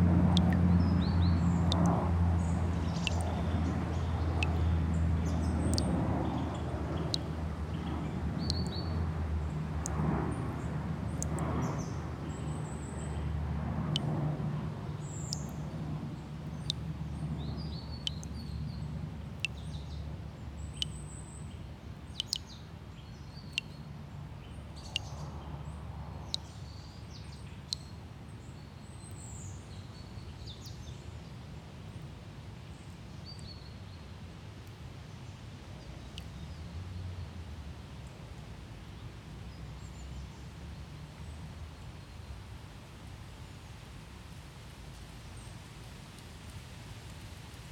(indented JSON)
{
  "title": "St. Elisabeth Kirchhof II, Wollankstraße, Berlin, Deutschland - St. Elisabeth Kirchhof II, Wollankstraße, Berlin - siren, dripping tap, wind in the trees, birds and airplanes",
  "date": "2012-10-13 11:45:00",
  "description": "St. Elisabeth Kirchhof II, Wollankstraße, Berlin - siren, dripping tap, wind in the trees, birds and airplanes. Although it is relatively quiet in this graveyard, there is literally no place in Soldiner Kiez without aircraft noise from Tegel airport.\n[I used the Hi-MD-recorder Sony MZ-NH900 with external microphone Beyerdynamic MCE 82]\nSt. Elisabeth Kirchhof II, Wollankstraße, Berlin - Sirene, tropfender Wasserhahn, Wind in den Bäumen, Vögel und Flugzeuge. Obwohl es auf dem Friedhof vergleichsweise still ist, gibt es im Soldiner Kiez buchstäblich keinen Ort, der von Fluglärm verschont bleibt.\n[Aufgenommen mit Hi-MD-recorder Sony MZ-NH900 und externem Mikrophon Beyerdynamic MCE 82]",
  "latitude": "52.56",
  "longitude": "13.39",
  "altitude": "45",
  "timezone": "Europe/Berlin"
}